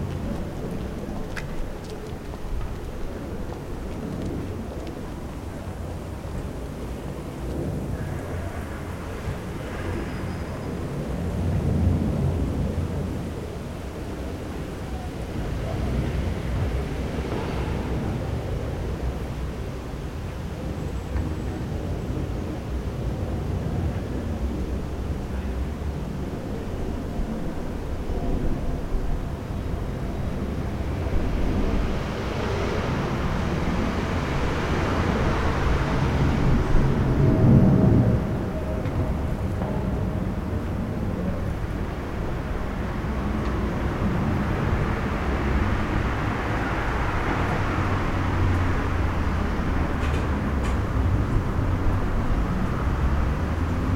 leipzig lindenau, spielplatz am karl-heine-kanal nahe gießerstraße
ein spielplatz am karl-heinekanal an der brücke gießerstraße.
Leipzig, Deutschland, 31 August 2011, 15:30